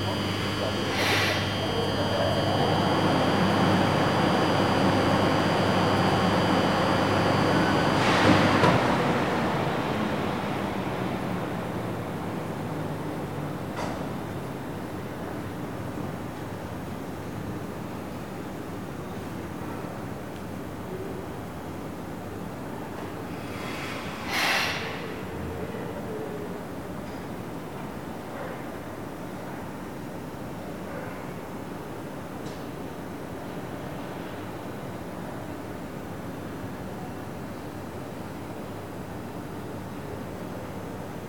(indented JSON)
{
  "title": "Gare de Toulouse Matabiau, Boulevard Pierre Semard, Toulouse, France - Loud Buzz railway station",
  "date": "2021-04-17 10:00:00",
  "description": "Loud Buzz railway station",
  "latitude": "43.61",
  "longitude": "1.45",
  "altitude": "147",
  "timezone": "Europe/Paris"
}